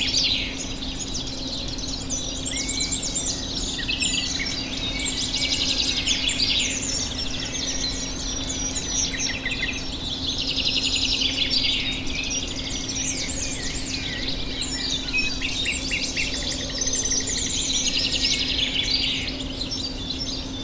Waking up at my parents house
Bird activity recorded directly from my bedroom during a visit to my parents house. Used a LS5 at maximum gain.